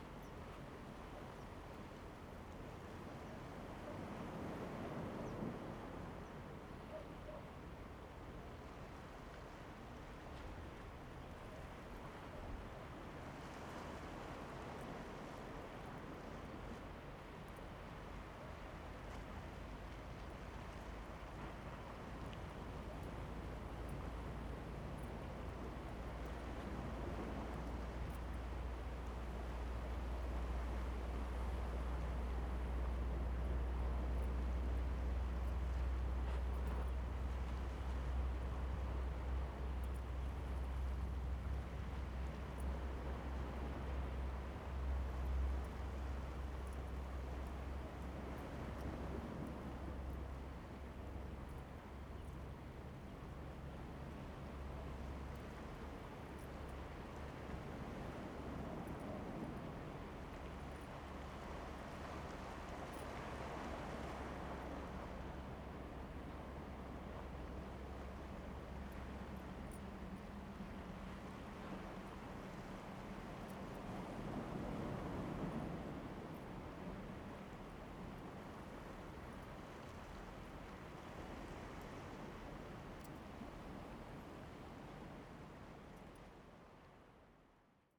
南濱公園, Hualien City - sound of the waves
sound of the waves
Zoom H2n MS+XY